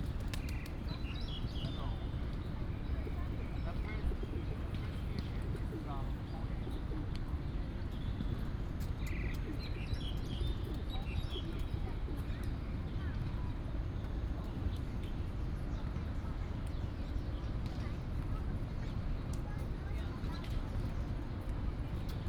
National Taiwan University, Taipei City - Next to the ecological pool
At the university, Bird sounds, Goose calls, pigeon